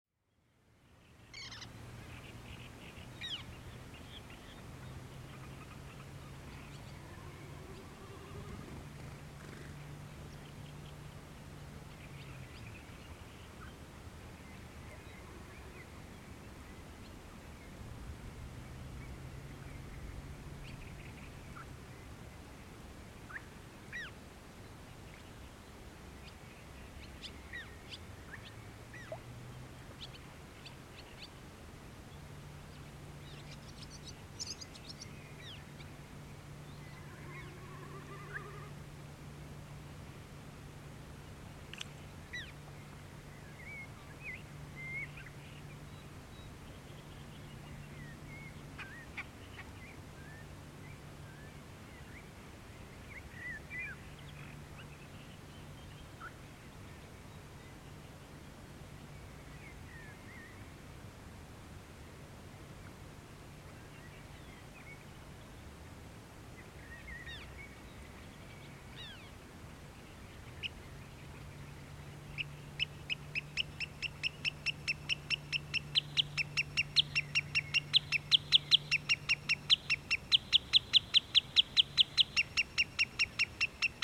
Lake Ahijärve, Estonia, recording duo with John
I made this recording simultaneously with John, ca 50 meters from his mics. My mics were appointed east or north. I hoped that a wader we saw earlier, will come near mics. He did, but maybe too near :) In Johns recording the piping of the wader is not so loud.